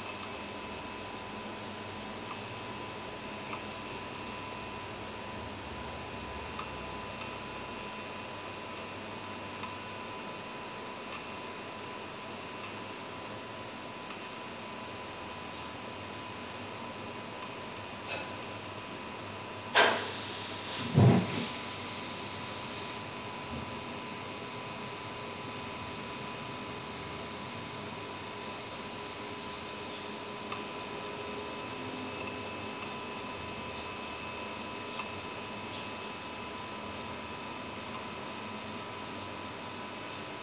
Poznan, Jezyce district, at the office, bathroom - glitchy halogen lamp
a small halogen light in the bathroom buzzing.
Poznań, Poland, 6 March